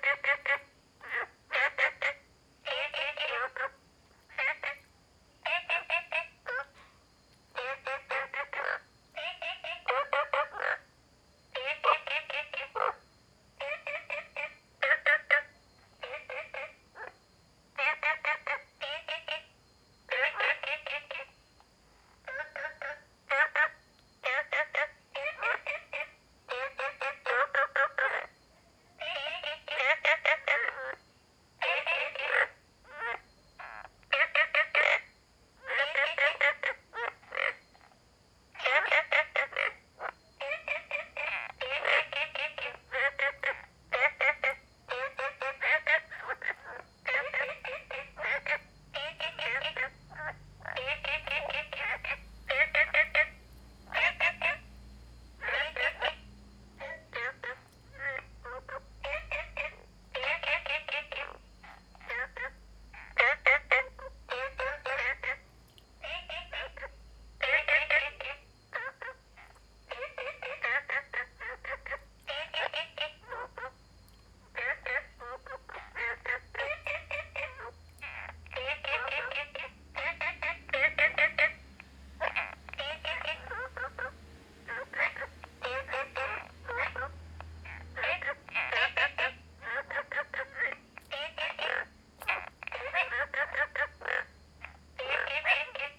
綠屋民宿, 桃米里 Taiwan - Frogs chirping
Frogs chirping, Ecological pool
Zoom H2n MS+XY
Nantou County, Taiwan, 16 September